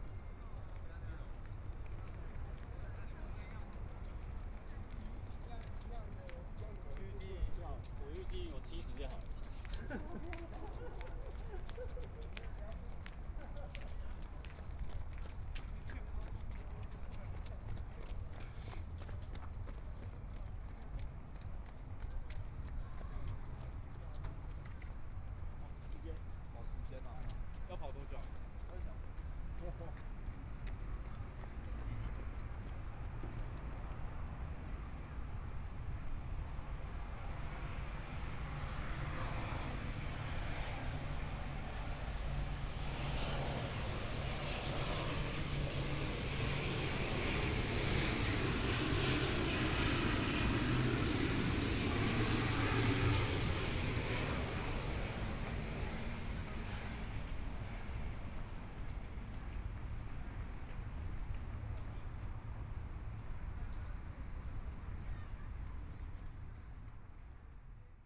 Xinsheng Park - Taipei EXPO Park - walking in the Park
walking in the Park, Birds singing, Aircraft flying through, Traffic Sound, Binaural recordings, Zoom H4n+ Soundman OKM II
Zhongshan District, 新生公園